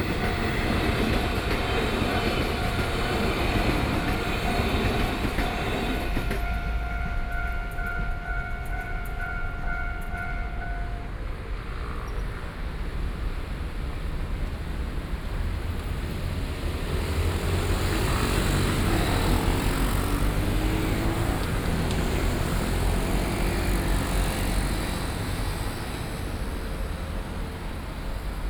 Traffic Sound, Traveling by train, Binaural recording, Zoom H6+ Soundman OKM II
Taoyuan County, Taiwan, 9 December 2013, 15:49